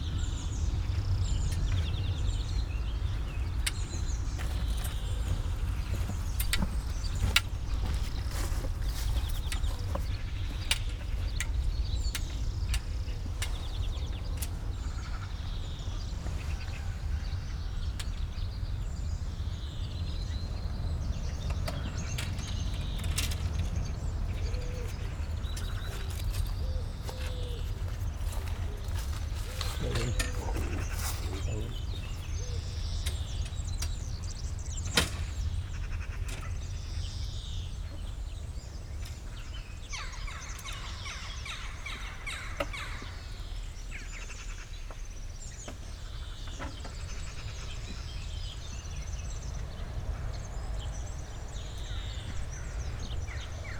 Bredereiche, Fürstenberg/Havel, Deutschland - morning at the river Havel
morning at the river Havel, village of Bredereiche. Drone from cars on cobblestones, an angler leaves the place, jackdaws around.
(Sony PCM D50, Primo EM 172)